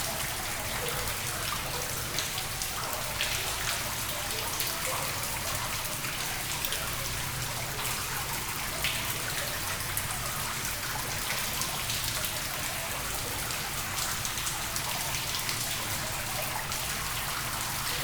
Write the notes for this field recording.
At the top of the Molvange schaft, inside the underground mine. Water is falling in a big tank.